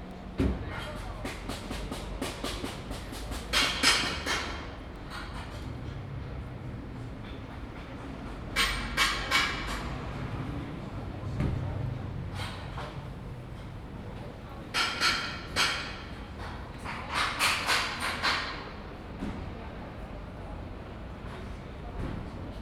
Victoria Square, London. - Victoria Square Building Work.
Victoria Square is usually a quiet spot in the middle of London. However, on this occasion there was building work taking place at one of the properties. Also one or two impatient drivers. Zoom H2n.
Westminster, London, UK, 30 June 2017